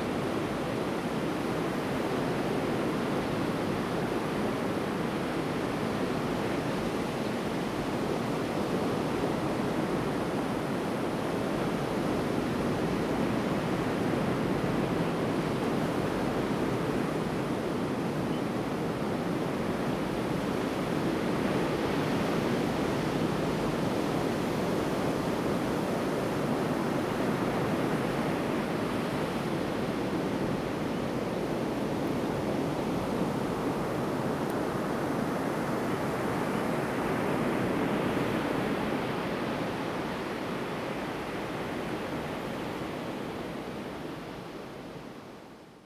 滿州鄉港仔, Manzhou Township - on the beach
on the beach, wind, Sound of the waves, birds sound
Zoom H2n MS+XY
Pingtung County, Taiwan, 23 April 2018, ~11:00